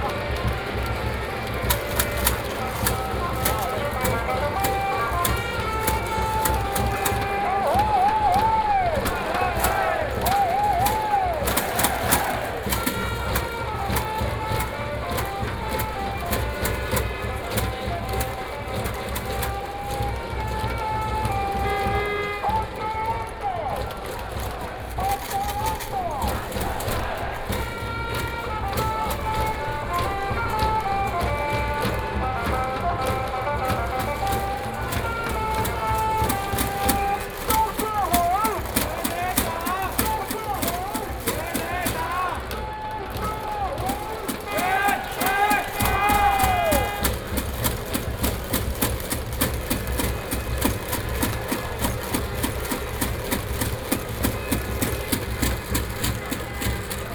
新莊棒球場, New Taipei City, Taiwan - Baseball field
15 November, ~20:00